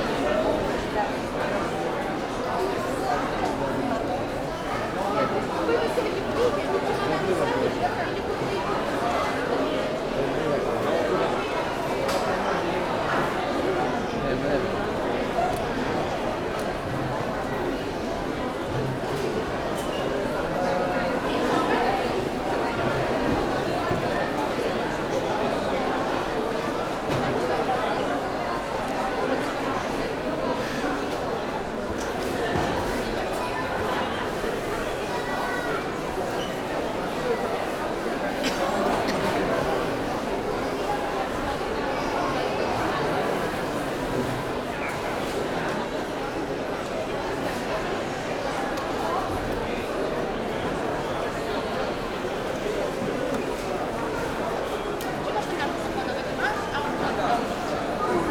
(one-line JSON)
{"title": "Heraklion Airport, departure hall - hundreds of passengers waiting in lines for check-in", "date": "2012-09-30 20:15:00", "latitude": "35.34", "longitude": "25.17", "altitude": "38", "timezone": "Europe/Athens"}